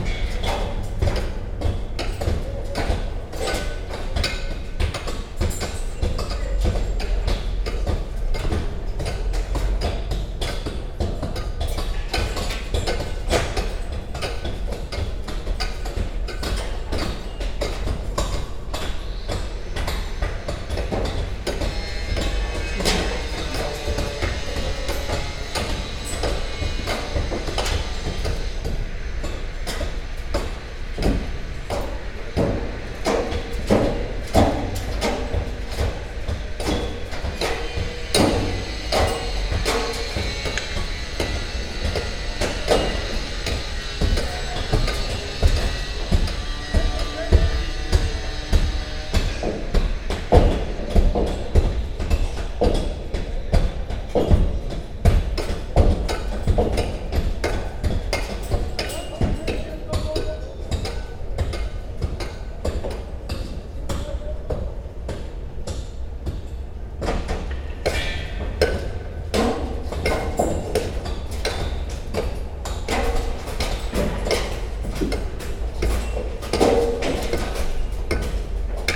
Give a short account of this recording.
constructions on nearby roof, hammering of an old facade